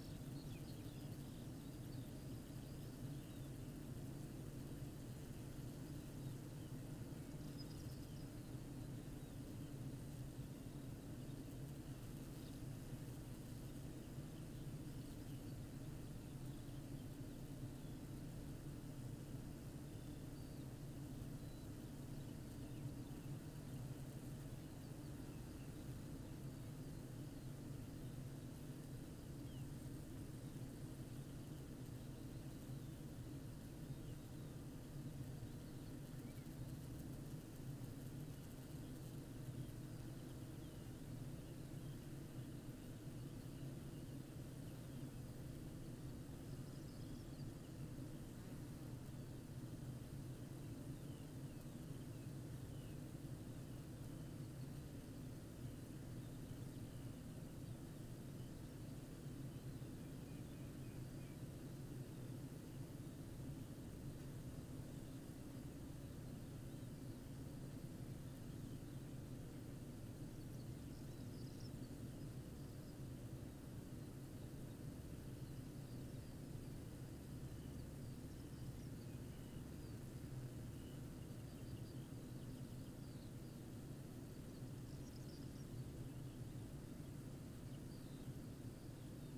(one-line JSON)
{"title": "Green Ln, Malton, UK - bee hives ...", "date": "2020-06-26 05:50:00", "description": "bee hives ... dpa 4060s clipped to bag to Zoom H5 ... all details above ...", "latitude": "54.13", "longitude": "-0.56", "altitude": "105", "timezone": "Europe/London"}